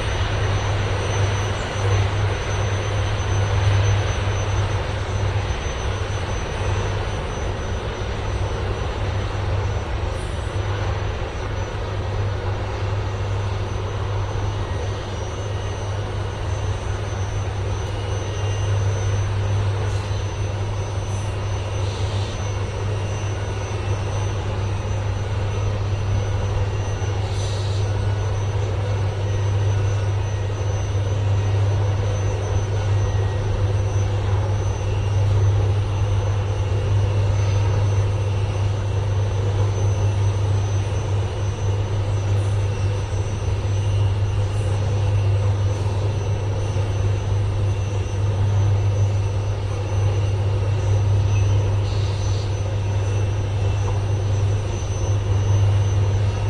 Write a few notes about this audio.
Ignition of a 65 MW electric arc furnace melting scrap into steel at Thy Marcinelle. Binaural. Zoom H2 with OKM ear mics.